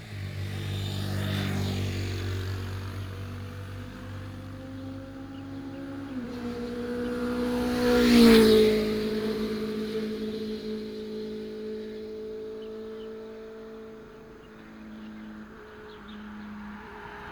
大河村, Sanwan Township, Miaoli County - motorcycle
Mountain road, There was a lot of heavy locomotives in the morning of the holidays, The sound of birds, Binaural recordings, Sony PCM D100+ Soundman OKM II